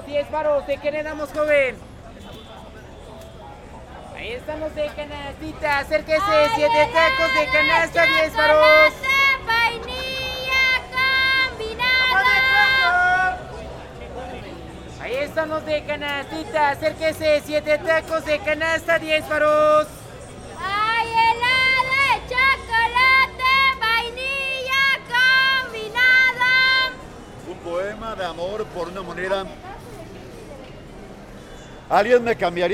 de Mayo, Centro histórico de Puebla, Puebla, Pue., Mexique - Calle 5 de Mayo - Puebla
Puebla (Mexique)
Rue 5 de Mayo
d'innombrables marchands ambulants. - extrait